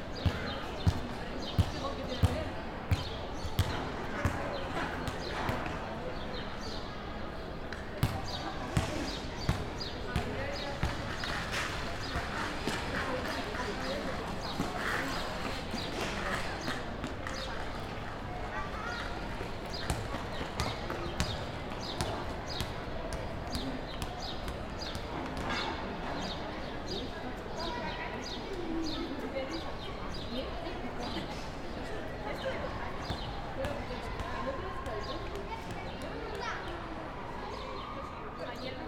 Del Buen Pastor Plaza, Donostia, Gipuzkoa, Espagne - Break a Bottle
Break a Bottle, city noise
Captation : ZOOM H6
Gipuzkoa, Euskadi, España